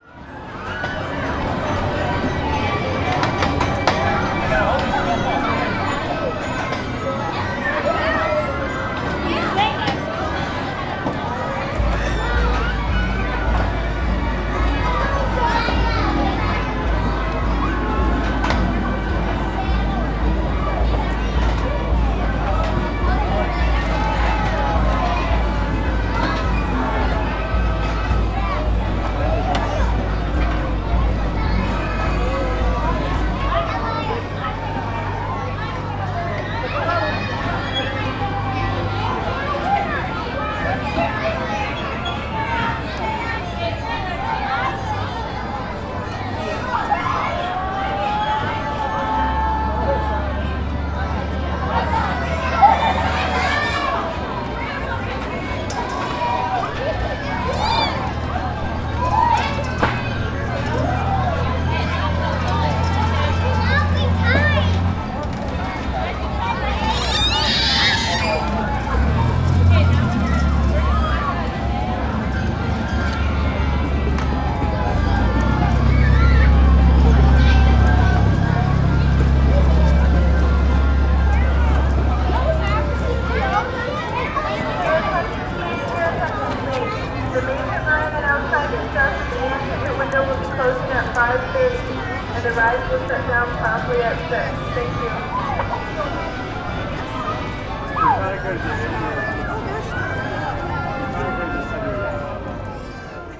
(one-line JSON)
{"date": "2010-09-05 05:00:00", "description": "Near the carousel at the Bay Beach amusement park.", "latitude": "44.53", "longitude": "-87.98", "altitude": "177", "timezone": "America/Chicago"}